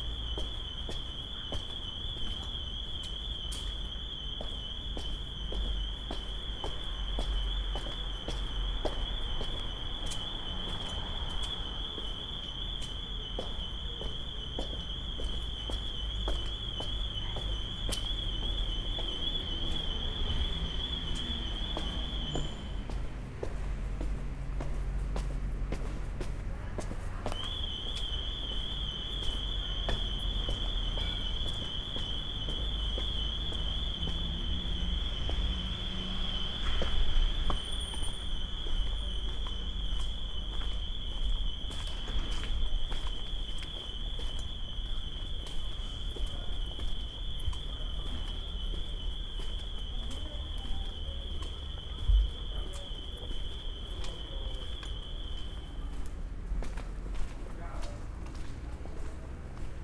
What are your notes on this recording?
day walk in Via Giacomo Ciamician, down the stairs into Via del Capuano, (SD702, DPA4060)